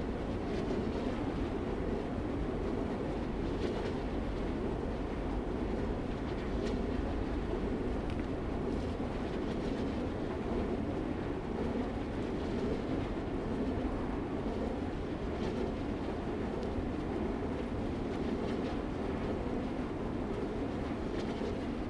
Wind power tower, In the parking lot
Zoom H2n MS+XY